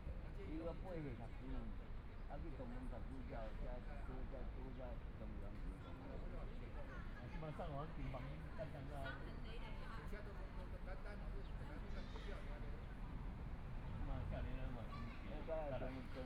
YongZhi Park, Taipei City - chat
Sitting in the park, Traffic Sound, Elderly voice chat, Birds singing
Binaural recordings
Zoom H4n+ Soundman OKM II
Taipei City, Taiwan